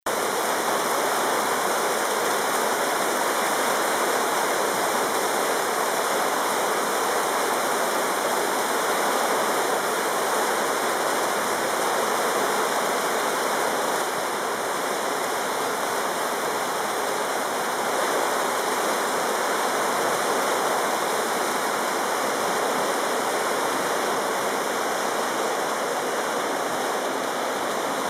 Water processing plant tower at Paljassaare
On top of the water processing plant tower, Paljassaare peninsula.
Tallinn, Estonia